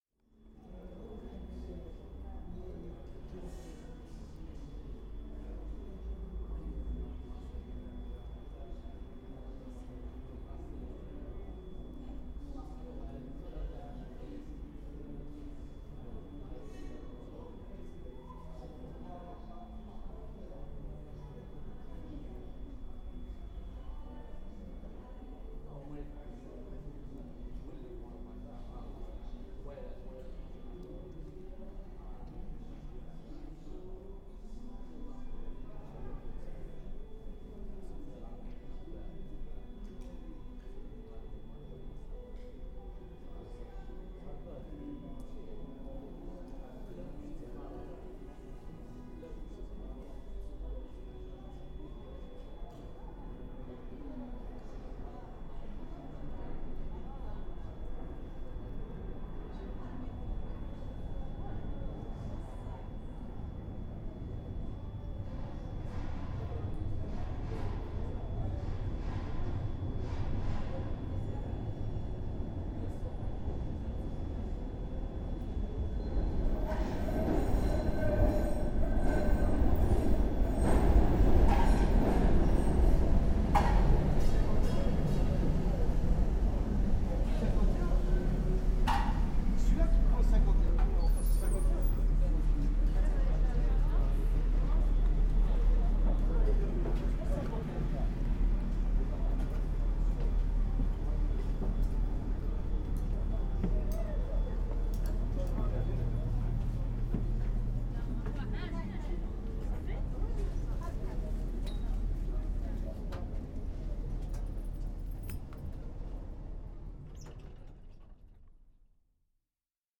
Brussels, Belgium - Singing trams at Lemonnier
The sound of trams at Lemonnier - the best tram station to listen to trams at that we have found in Brussels. There is a long tunnel and as the trams come into the station, the concrete walls amplify the amazing sound of the wheels screeching on the points. Recorded EDIROL R-09.